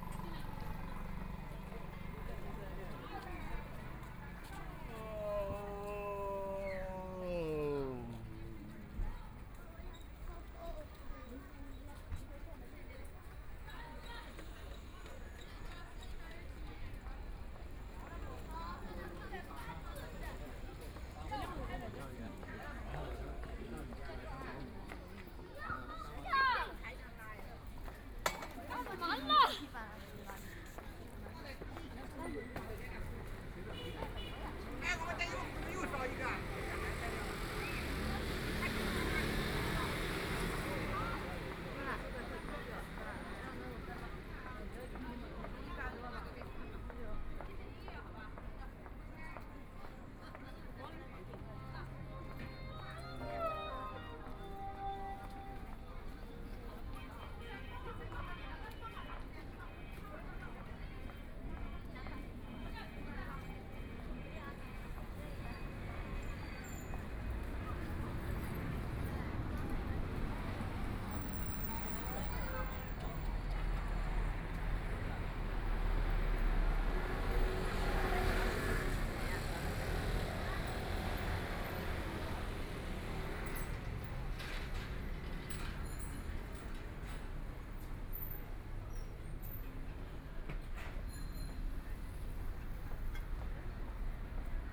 Fangbang Road, Shanghai - in the old district
Walk across the road in the old district, Binaural recording, Zoom H6+ Soundman OKM II
Shanghai, China, 29 November 2013, 16:45